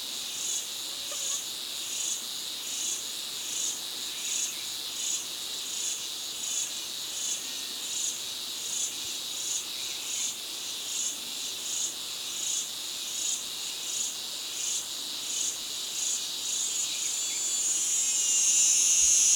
calm and monotone ambience at the border of the forest, São Sebastião da Grama - SP, Brasil - calm and monotone ambience at the border of the forest with intensification of insects in the ending
Arquivo sonoro de um vídeo que fiz enquanto gravava um macaco sauá no alto de uma embaúba.
4 March 2022, ~13:00